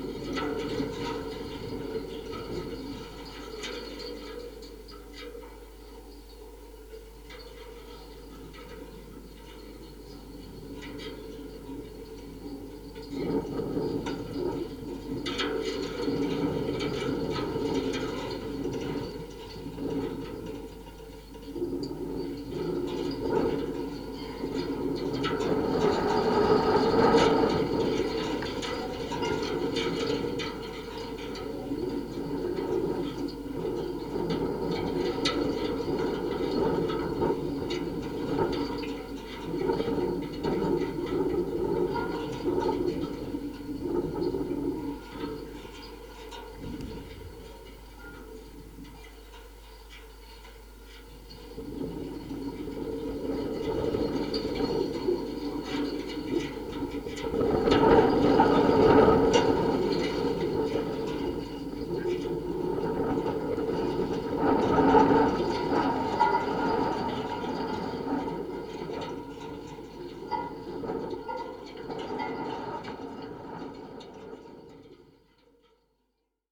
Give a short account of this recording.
contact microphones attached to metal fence. day is very windy